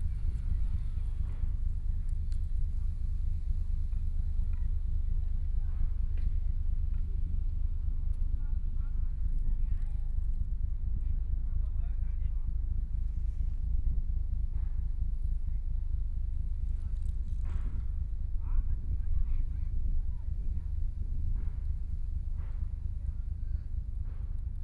Huangpu River, Shanghai - On the Bank of the river
Sitting on the Bank of the river, The cleaning staff is the rest of the conversation sound, The river running through many ships, Binaural recording, Zoom H6+ Soundman OKM II